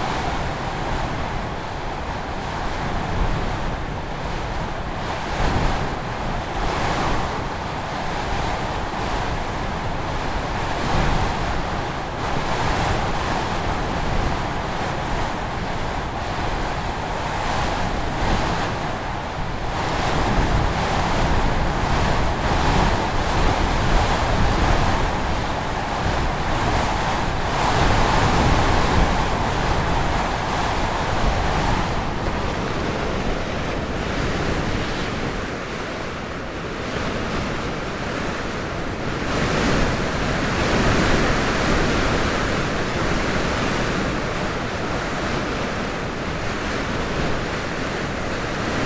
Vebron, France - Gargo mount
Climbing the Gargo mount. This is the most powerful wind I ever knew, with 130 km/h wind and 180 km/h bursts. I had to creep as it was strictly impossible to walk. It was, for sure, a beautiful place !